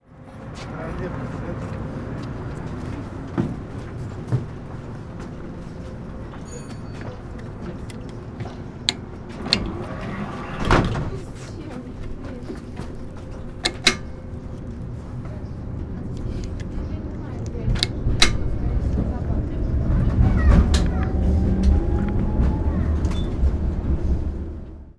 Tallinn, Tartu maantee
old school mechanic ticket stamp in tram, from soviet times, will probably disappear soon and be replaced by electric systems
Tallinn, Estonia, 22 April, 11:20